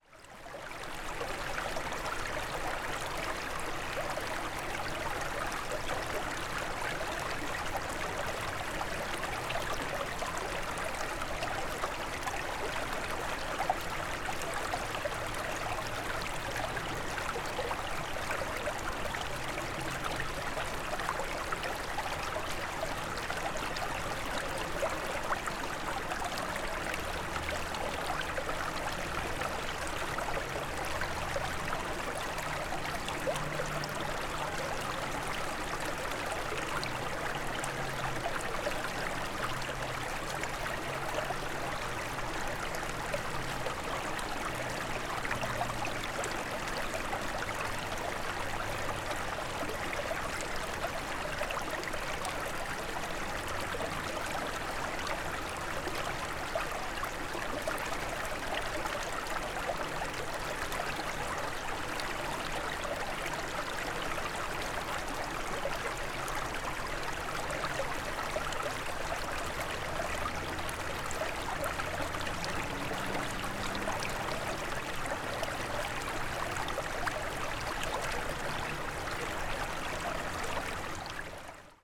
Fishpot Creek, Valley Park, Missouri, USA - Fishpot Creek
Water level was low so was able to get out on some rocks in the middle of the creek to make this ambient recording.